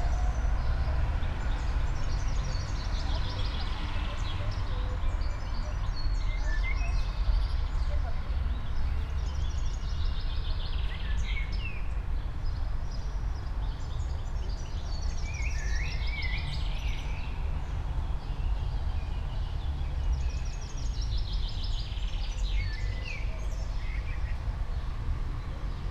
all the mornings of the ... - jun 22 2013 saturday 07:58
Maribor, Slovenia